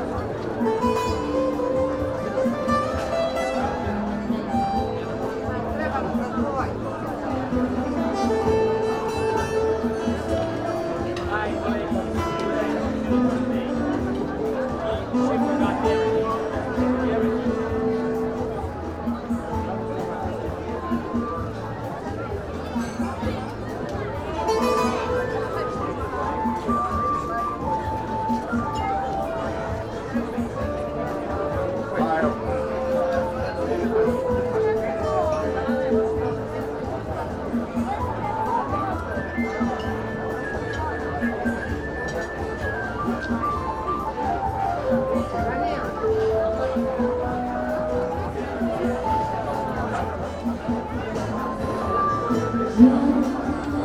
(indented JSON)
{
  "title": "sea room, Novigrad, Croatia - window, bessame ...",
  "date": "2014-07-20 21:15:00",
  "description": "terrace band with ”besame mucho” song, restaurant, dinner time below, sounds of plates, forks etc. ...",
  "latitude": "45.32",
  "longitude": "13.56",
  "timezone": "Europe/Zagreb"
}